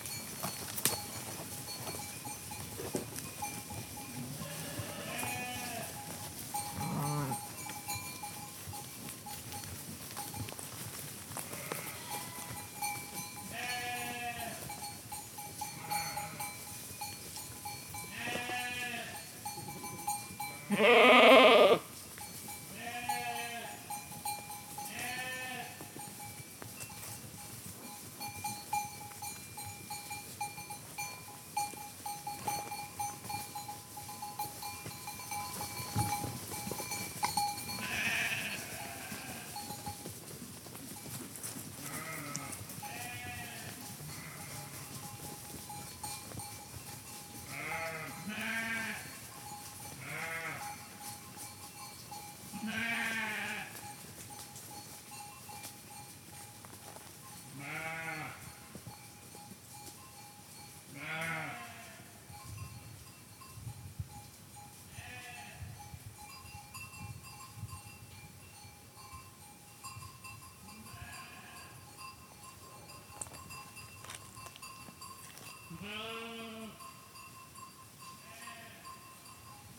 Platak, V. Pribenis, sheep
Sheep on field @ mountain region Platak 1100m above see level;